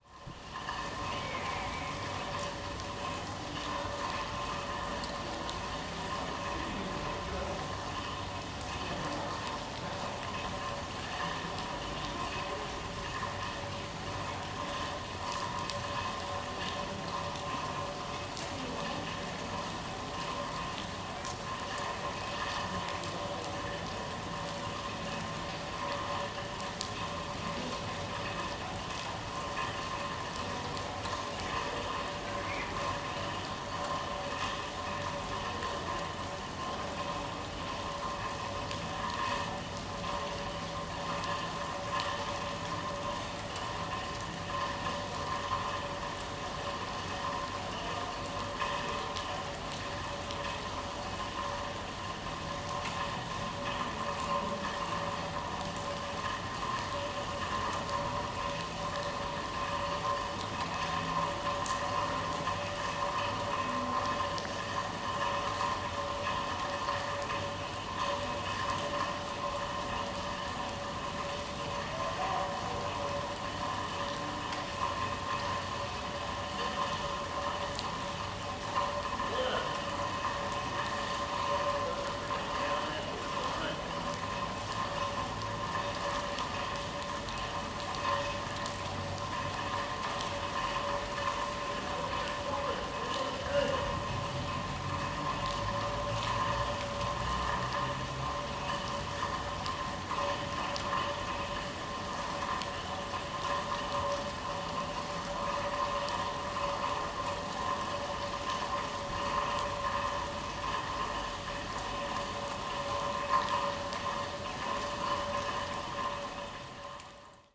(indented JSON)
{
  "title": "Belfast, Reino Unido - Rain at Kelly's Cellars",
  "date": "2013-11-28 21:44:00",
  "description": "ANother rainy night outside Kelly's cellars on Belfast city centre.",
  "latitude": "54.60",
  "longitude": "-5.93",
  "altitude": "10",
  "timezone": "Europe/London"
}